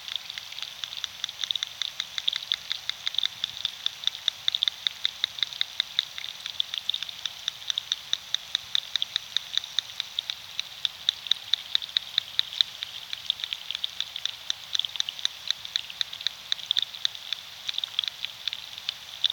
Lithuania, river Sventoji hydrophone
Underwater sounds of river Sventoji. Stereo piezo hydrophone.
Utenos apskritis, Lietuva, September 2021